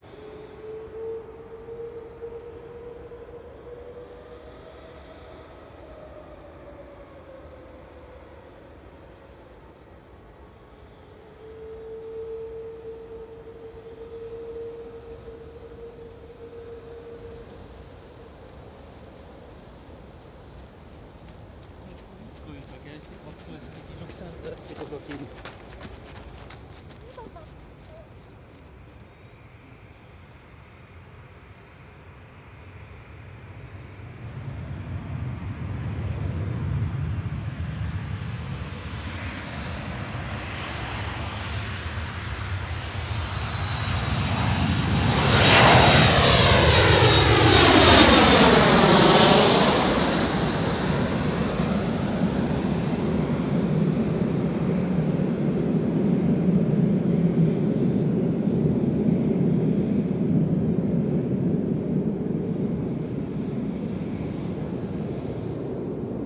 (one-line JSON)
{"title": "Flughafen Berlin-Tegel (TXL), Flughafen Tegel, Berlin, Deutschland - Berlin. Flughafen Tegel – Überflug", "date": "2011-06-05 19:30:00", "description": "Standort: Wanderweg am westlichen Ende des Flughafens. Blick Richtung West.\nKurzbeschreibung: Jogger, Passagiermaschine, Fahrradfahrer.\nField Recording für die Publikation von Gerhard Paul, Ralph Schock (Hg.) (2013): Sound des Jahrhunderts. Geräusche, Töne, Stimmen - 1889 bis heute (Buch, DVD). Bonn: Bundeszentrale für politische Bildung. ISBN: 978-3-8389-7096-7", "latitude": "52.56", "longitude": "13.26", "timezone": "Europe/Berlin"}